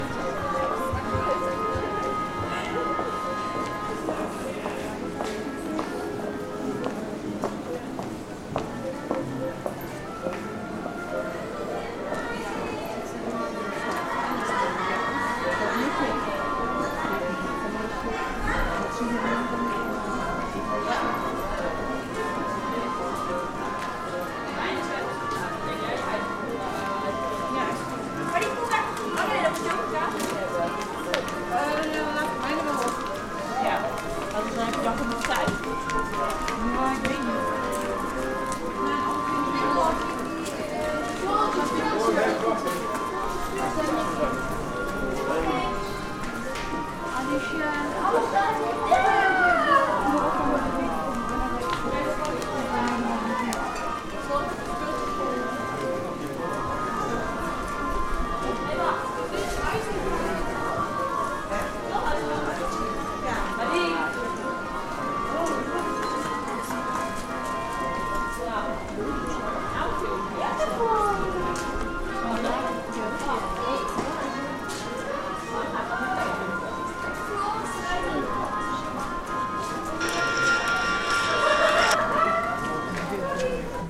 Český Krumlov, Tschechische Republik, Latrán